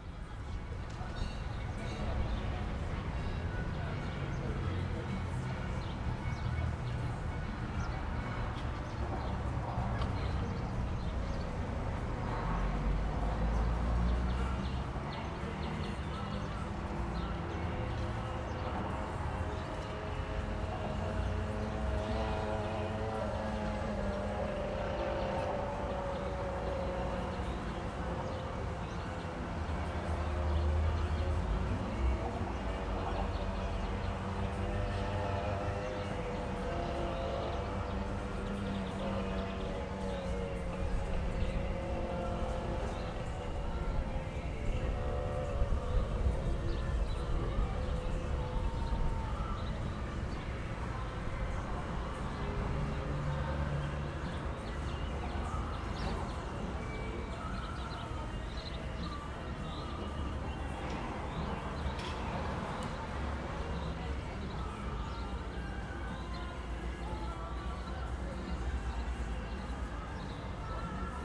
stairs to the riverfront from Koroška cesta, Maribor, Slovenia - local ambiance with cafe and bridge
from this vantage point overlooking the river, sounds from the local housing estate, the café within it, and traffic from the bridge over the river in the distance were all audible
16 June 2012